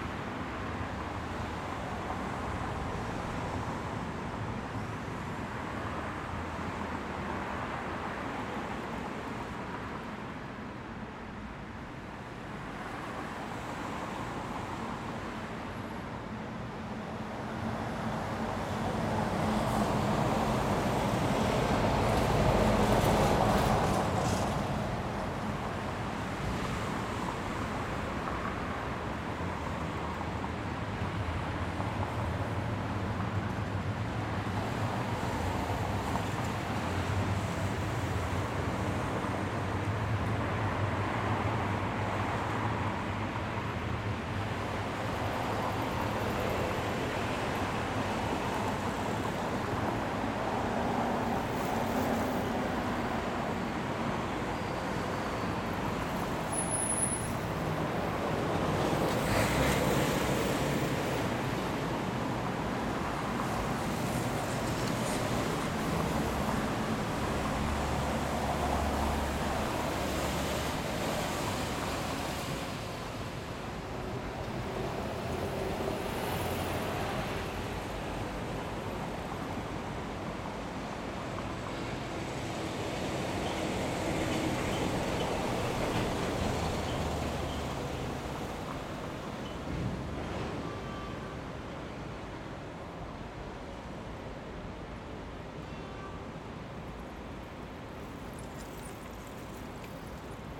Chau. de Vilvorde, Bruxelles, Belgique - Devant le canal...
Devant le canal avec les voitures.
Brussel-Hoofdstad - Bruxelles-Capitale, Région de Bruxelles-Capitale - Brussels Hoofdstedelijk Gewest, België / Belgique / Belgien, 30 June 2022, 07:45